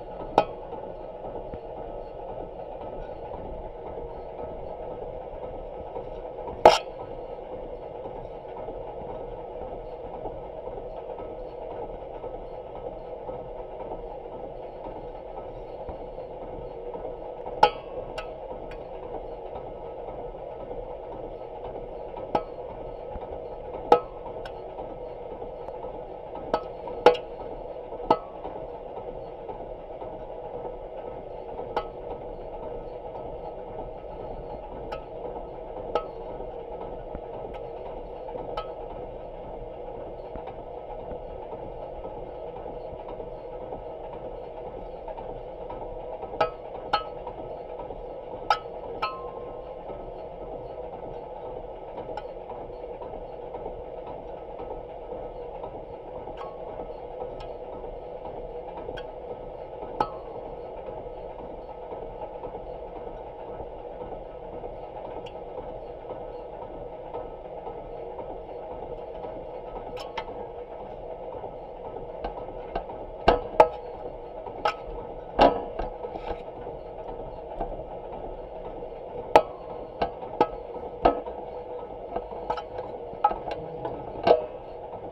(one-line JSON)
{"title": "Necker, Paris, France - Paris Montparnasse station", "date": "2016-07-23 17:00:00", "description": "Recording of an escalator with a contact microphone. This is the war inside this banal object !", "latitude": "48.84", "longitude": "2.32", "altitude": "72", "timezone": "Europe/Paris"}